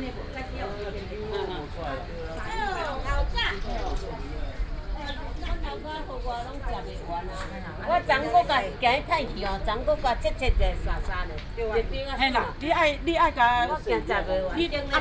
Sanxia District, New Taipei City - Morning